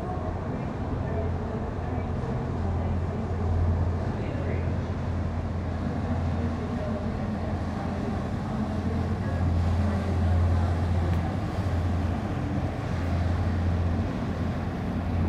Notre Dame, Paris, France - Tourists boat
A tourists boat is passing by on the Seine river near the Notre-Dame cathedral.